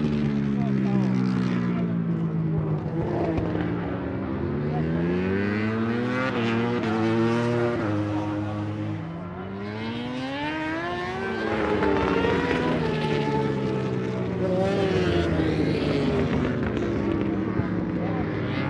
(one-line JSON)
{"title": "Donington Park Circuit, Derby, United Kingdom - British Motorcycle Grand Prix 2004 ... free practice ...", "date": "2004-07-23 09:50:00", "description": "British Motorcycle Grand Prix 2004 ... free practice ... part one ... one point stereo mic to minidisk ...", "latitude": "52.83", "longitude": "-1.38", "altitude": "94", "timezone": "Europe/London"}